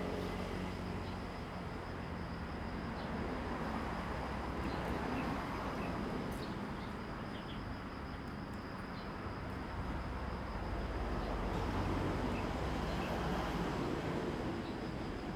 賓朗村, Beinan Township - Small village
Birdsong, Traffic Sound
Zoom H2n MS +XY
Taitung County, Taiwan, 7 September, 06:59